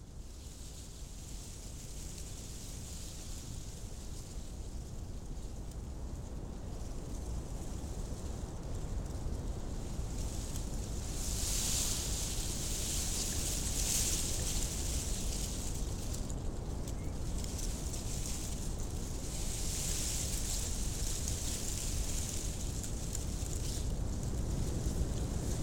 Galeliai, Lithuania, dried grass
very strong wind. microphones hidden in the dried grass.
Utenos rajono savivaldybė, Utenos apskritis, Lietuva, 28 December, 16:30